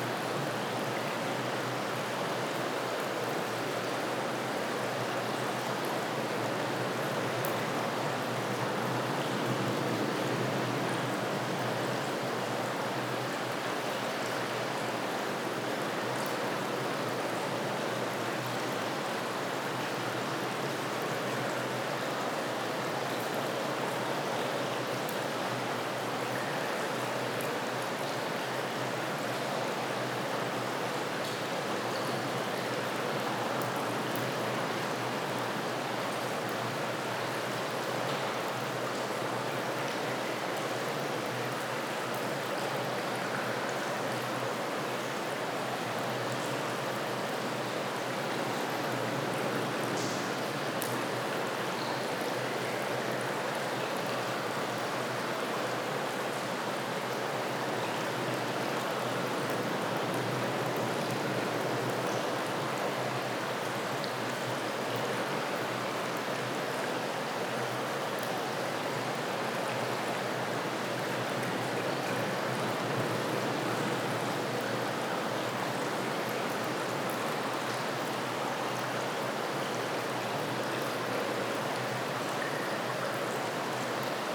Ponta Do Sol, Portugal - water inside tunnel
water dripping and flowing inside a tunnel, reveberation and resonance, church audio binaurals with zoom h4n